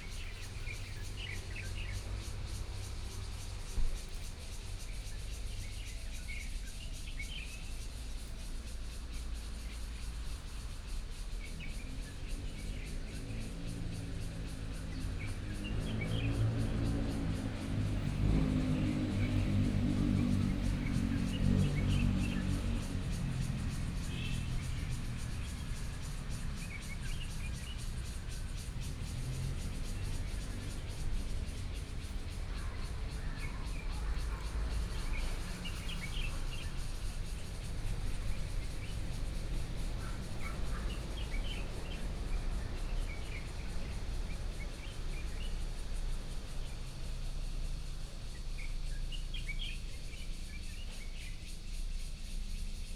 楊梅市富岡里, Taoyuan County - Abandoned factory
in theAbandoned factory, Birdsong sound, Cicadas sound, Traffic Sound, Far from the Trains traveling through